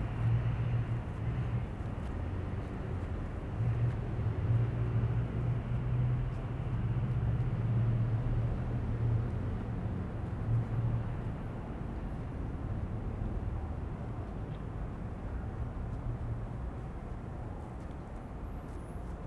the abuse these beautiful peoples suffered and so many countless others in similar situations as the americas and other places were colonized by the christian terrorists of centuries previous and the current times can not be fathomed in the breadth and width of its brutality and heartlessness. they amd other non white non christian people were forced into slave labor to build disgusting places like this that stand and are celebrated to this day as symbols and realities of the ongoing settler colonialist genocide.
27 June 2018, ~21:00